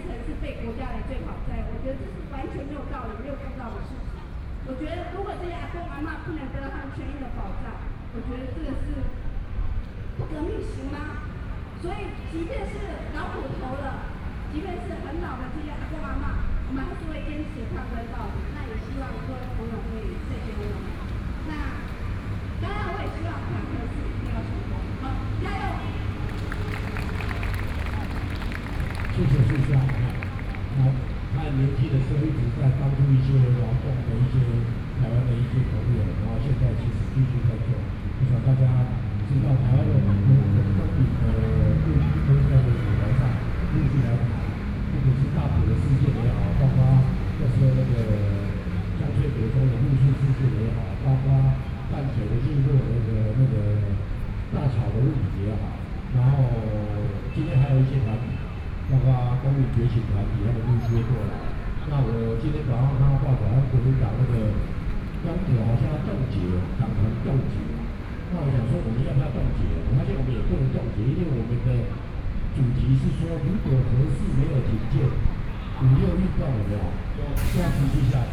Chiang Kai-Shek Memorial Hall - Protest
Off factory workers to protest on behalf of the connection description published, Traffic Noise, Sony PCM D50 + Soundman OKM II